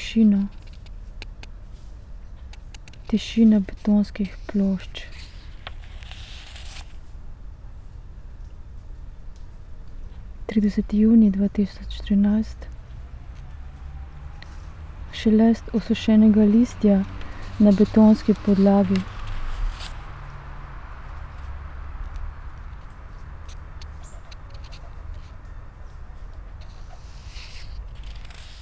v hladu jutra visokega poletja
molčečnost, ki prerašča v tišino
tišina betonskih plošč
30. junij 2013
šelest osušenega listja na betonski podlagi